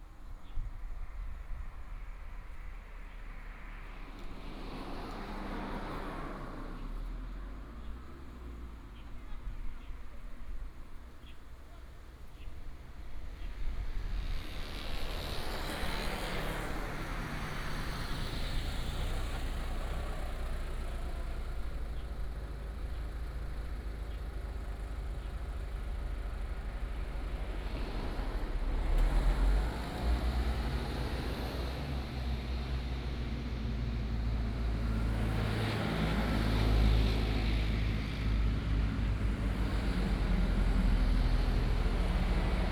Nanshan Rd., Manzhou Township - Beside a mountain road
Bird cry, Traffic sound, Beside a mountain road